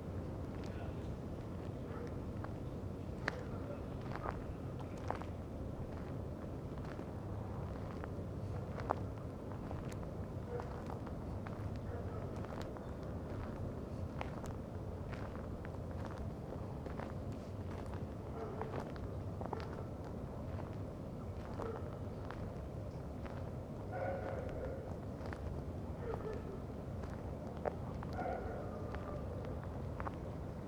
Mostecká, Mariánské Radčice, Tschechien - village walk
a few hours later, in the dark... (Sony PCM D50, Primo EM172)